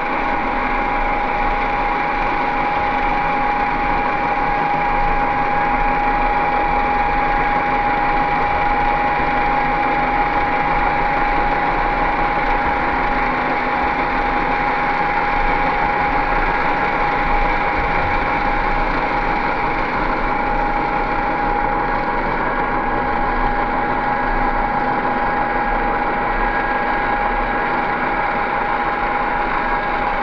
Schiemond, Rotterdam, Netherlands - Underwater recording
Underwater recording using 2 hydrophones. Vessels of different sizes